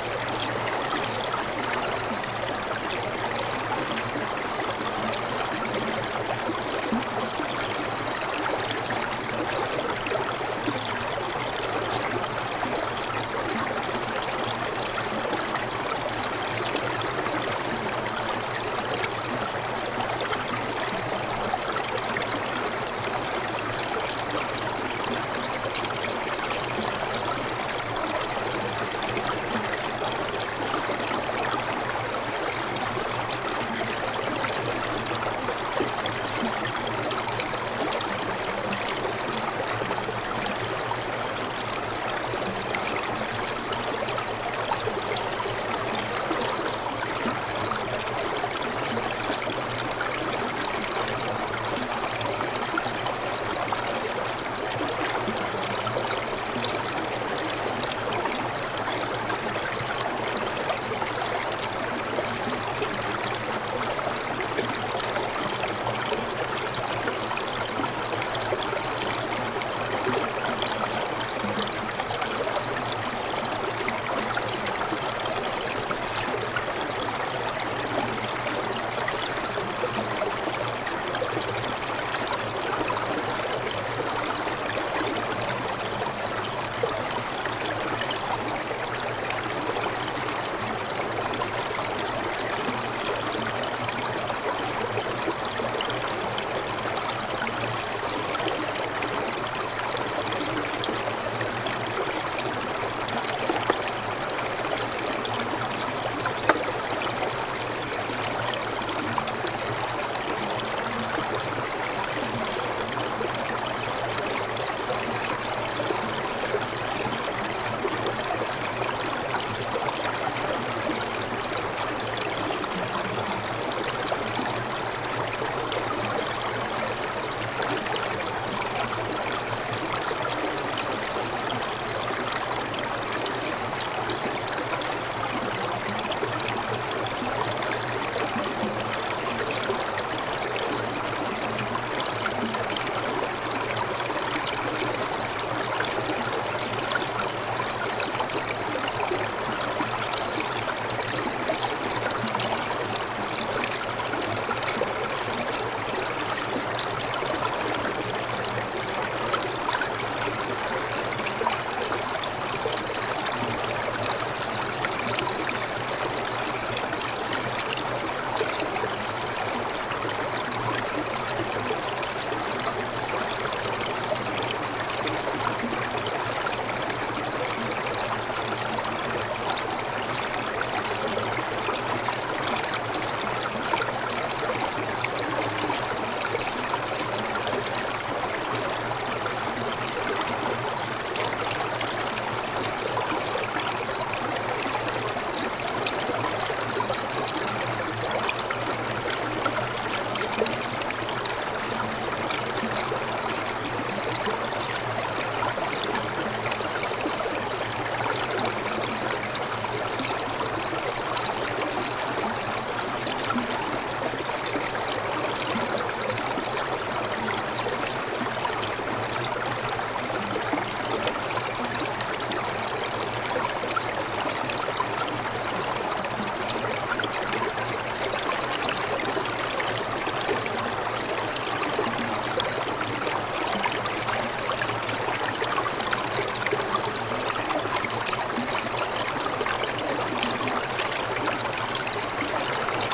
Targoszów, Poland - (878) Mountain brook
Recording of a mountain brook covered mostly with ice. The microphones were dropped onto the ice, close to the water current.
Recorded with DPA 4560 on Tascam DR-100 mk3.